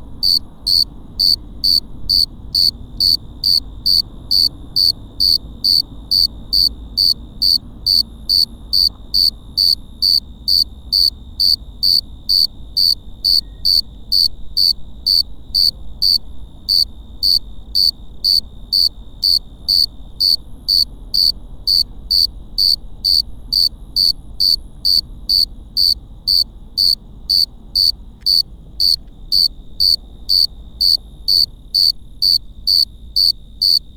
Lomas del Madrono, Lomas del Campestre, Gto., Mexico - Grillos cercanos en el cerro gordo.
Close to some crickets at Cerro Gordo in Leon, Guanajuato. Mexico.
I made this recording on April 18th, 2019, at 9:19 p.m.
I used a Tascam DR-05X with its built-in microphones and a Tascam WS-11 windshield.
Original Recording:
Type: Stereo
Cerca de algunos grillos en el Cerro Gordo en León, Guanajuato. México.
Esta grabación la hice el 18 de abril 2019 a las 21:19 horas.
Guanajuato, México